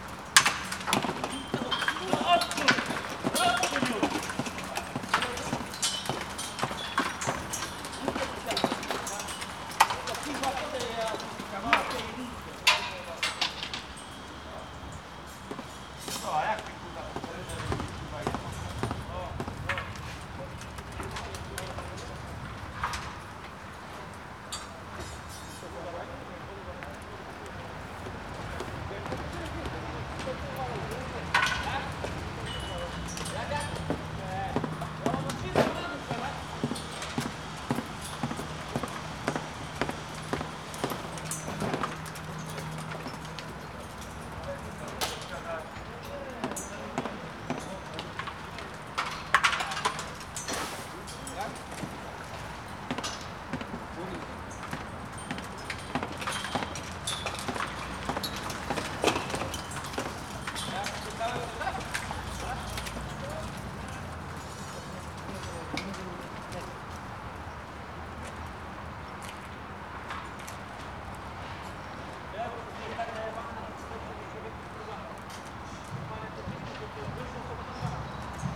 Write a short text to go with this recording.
construction workers peeling off the outer layer of a apartment building, pounding it with hammers and chisels. parts of the shell falling a few levels down, hitting and ringing on the scaffolding as if they were in a pachinko game. workers shouting at eachother.